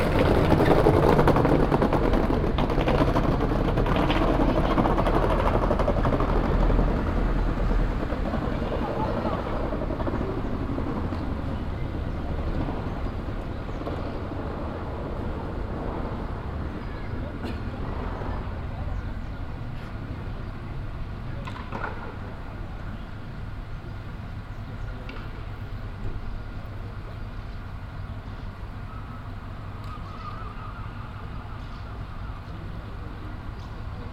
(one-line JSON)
{"title": "Holstenbrücke, Kiel, Deutschland - Sunday in Kiel (binaural recording)", "date": "2021-05-30 13:39:00", "description": "Quiet sunday in Kiel around noon. Gulls always looking for a snack to steal from people in a near cafe, pedestrians, some traffic, distant 1:45 PM chimes of the town hall clock. Sony PCM-A10 recorder with Soundman OKM II Klassik microphone and furry windjammer.", "latitude": "54.32", "longitude": "10.14", "altitude": "5", "timezone": "Europe/Berlin"}